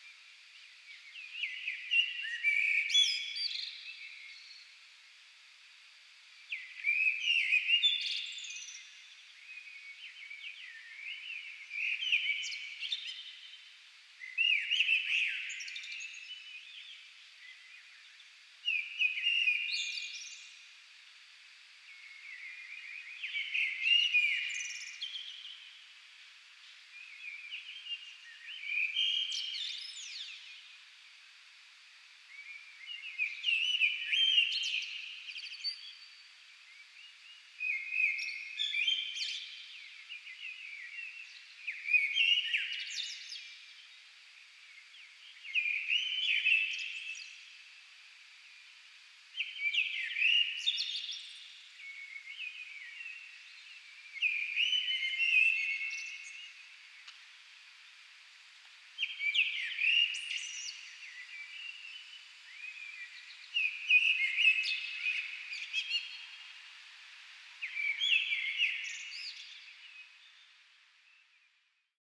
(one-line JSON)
{"title": "Barcelona, España - (...) Dawn at home", "date": "2012-06-10 06:00:00", "description": "Dawn at home.\nRecorder: AETA - MIXY\nMicrophones: Primo EM172\n| Mikel R. Nieto | 2012", "latitude": "41.41", "longitude": "2.16", "altitude": "87", "timezone": "Europe/Madrid"}